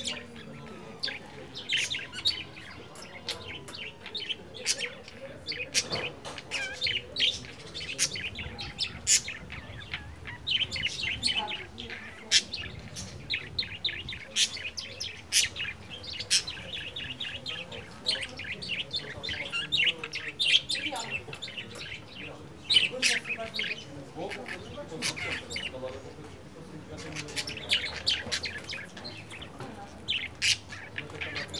{
  "title": "Lisbon, Castelo, Rua do Espírito Santo - birdcage",
  "date": "2013-09-26 14:17:00",
  "description": "i noticed many denizens of lisbon keep pet birds. the cages were either on balconies or on window sills. it's quite common to hear these birds around the city, chirping away. here two birds and a group of locals/neighbors talking a few meters further.",
  "latitude": "38.71",
  "longitude": "-9.13",
  "altitude": "95",
  "timezone": "Europe/Lisbon"
}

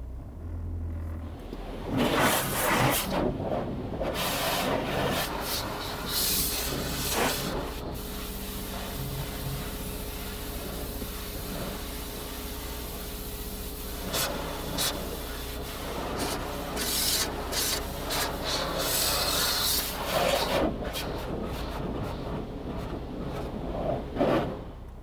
{
  "title": "Köln, car wash",
  "description": "recorded july 4th, 2008.\nproject: \"hasenbrot - a private sound diary\"",
  "latitude": "50.94",
  "longitude": "6.91",
  "altitude": "51",
  "timezone": "GMT+1"
}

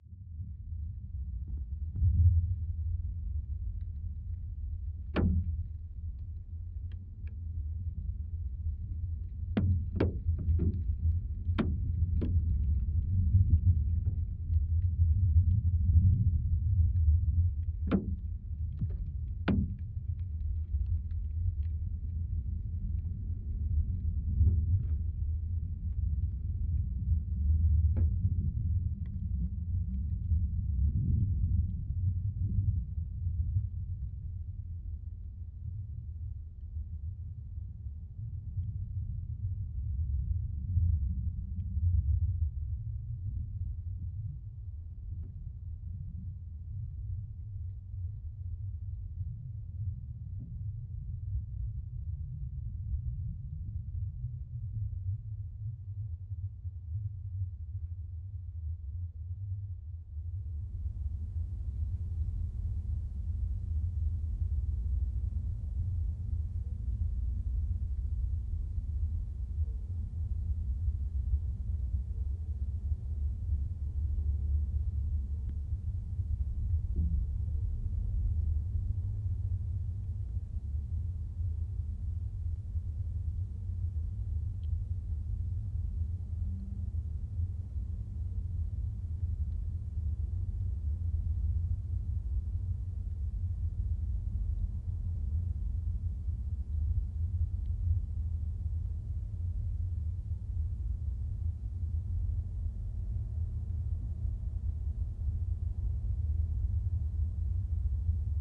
{
  "title": "Grybeliai, Lithuania, abandoned car",
  "date": "2020-01-07 15:10:00",
  "description": "rusty, abandoned car for some unknown reason left in a meadow in soviet times...now the meadow turned to a young forest...contact mics on the car...does it still dream of highways?",
  "latitude": "55.51",
  "longitude": "25.55",
  "altitude": "120",
  "timezone": "Europe/Vilnius"
}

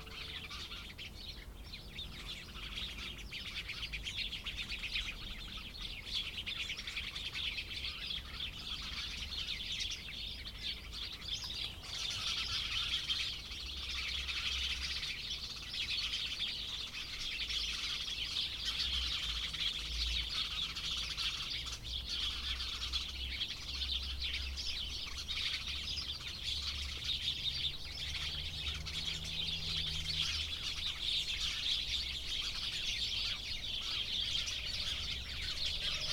{
  "title": "Rue de l'Église, Chindrieux, France - Moineaux",
  "date": "2022-10-02 18:10:00",
  "description": "Piailleries de moineaux dans un massif de bambous.",
  "latitude": "45.82",
  "longitude": "5.85",
  "altitude": "301",
  "timezone": "Europe/Paris"
}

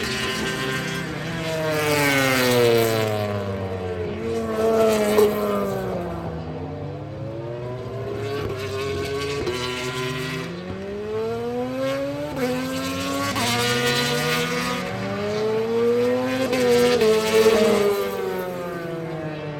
{
  "title": "Donington Park Circuit, Derby, United Kingdom - british motorcycle grand prix 2007 ... motogp practice 1 ...",
  "date": "2007-06-22 10:10:00",
  "description": "british motorcycle grand prix 2007 ... motogp practice 1 ... one point stereo mic to mini disk ...",
  "latitude": "52.83",
  "longitude": "-1.38",
  "altitude": "94",
  "timezone": "Europe/London"
}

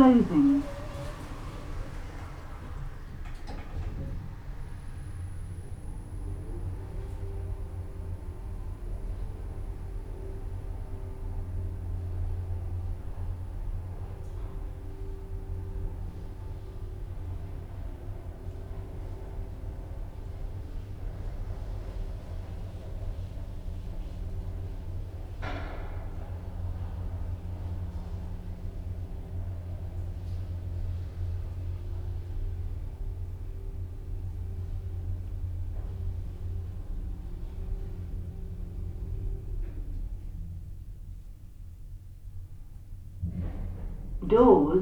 {
  "title": "Whitby, UK - West Cliff Lift",
  "date": "2015-09-03 10:15:00",
  "description": "Going down in the lift ... lavaliers clipped to baseball cap ...",
  "latitude": "54.49",
  "longitude": "-0.62",
  "altitude": "33",
  "timezone": "Europe/London"
}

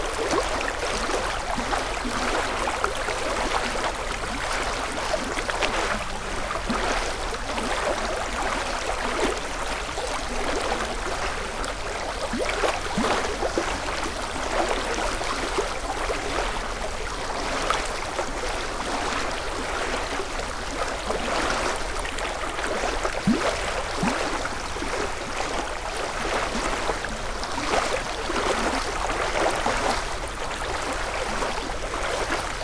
{
  "title": "Maintenon, France - Guéreau river",
  "date": "2016-12-29 15:30:00",
  "description": "The Guéreau river flowing quietly during winter times.",
  "latitude": "48.59",
  "longitude": "1.58",
  "altitude": "99",
  "timezone": "GMT+1"
}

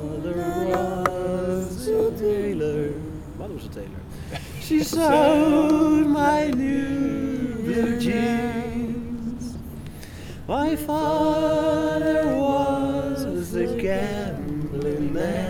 {
  "title": "Ha-Neviim St, Jerusalem, Israël - Rooftop singings, a jewish song and late night encounters",
  "date": "2014-01-27 23:39:00",
  "description": "Late night singing with some other travelers on the rooftop of Abraham Hostel; some classics, a jewish song and late night encounters. (Recorded with Zoom 4HN)",
  "latitude": "31.78",
  "longitude": "35.22",
  "altitude": "815",
  "timezone": "Asia/Jerusalem"
}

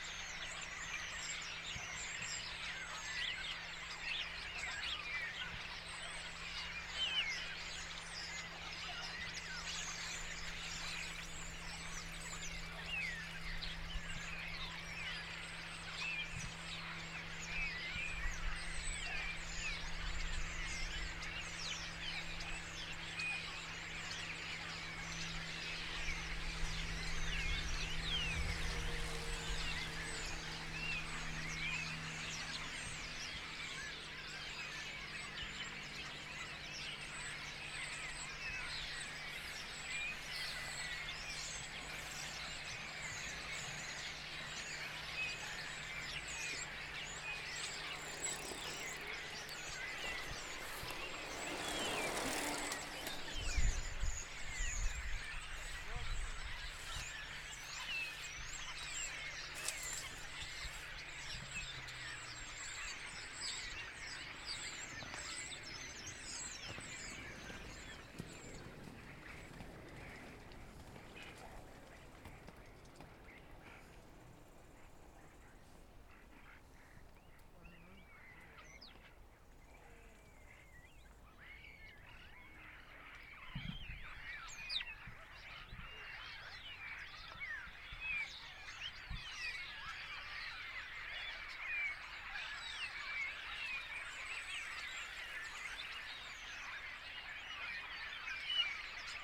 {
  "title": "Sachsenbrücke, Pirna, Deutschland - stare in a blackberry hedge",
  "date": "2020-09-12 16:40:00",
  "description": "a swarm stare in a blackberry hedge on the Elbe cycle path near Pirna.\nCyclists and inlinskater pass by, on the Elbe comes a motorboat.\nZoom H3 Recorder",
  "latitude": "50.96",
  "longitude": "13.92",
  "altitude": "115",
  "timezone": "Europe/Berlin"
}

